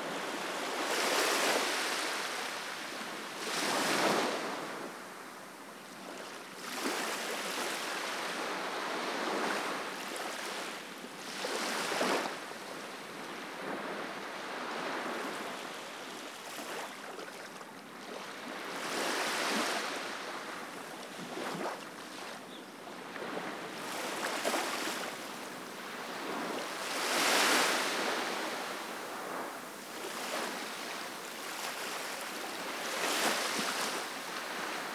Spain - Waves Pegura Beach
Waves lapping Segura Beach, it had been windy the day before but this day sunny and calm. Sony M10 Rode Stereo Videomic Pro X
Peguera, Illes Balears, Spain, 9 March 2017, 08:30